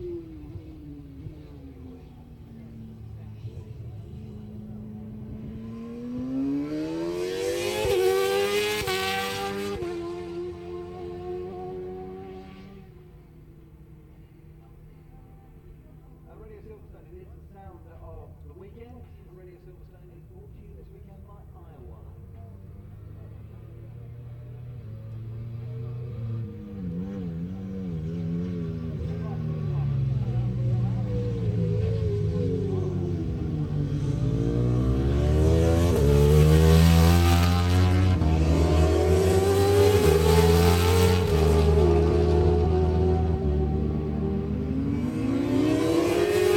Lillingstone Dayrell with Luffield Abbey, UK - MCN Superbikes Qualifying 1999 ...
MCN Superbikes Qualifying ... Abbey ... Silverstone ... one point stereo mic to minidisk ... warm sunny day ...
19 June 1999, 14:30